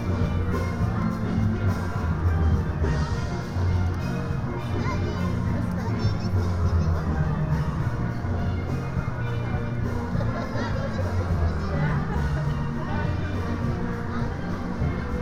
Broad Street, Reading, UK - Christmas on Broad Street Soundwalk (West to East)
A short soundwalk from the pedestrianised section of Broad Street in Reading from west to east, passing the Salvation Army band, buskers, small PAs on pop-up stalls and RASPO steel pan orchestra. Binaural recording using Soundman OKM Classics and windscreen 'ear-muffs' with a Tascam DR-05 portable recorder.